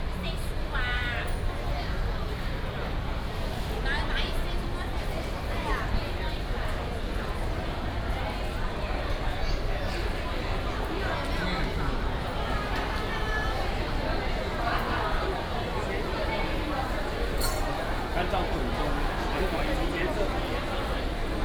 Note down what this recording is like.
Agricultural products market, Traffic sound